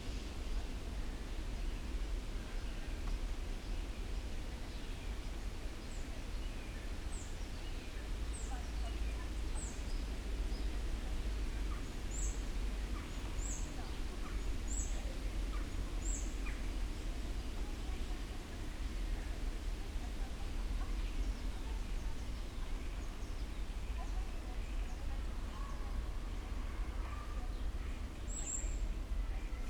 Köpenick, Berlin - at the river Wuhle
Berlin Köpenick, at the river Wuhle, ambience, passing-by freight train, nothing to hear from the river itself.
(Sony PCM D50, DPA4060)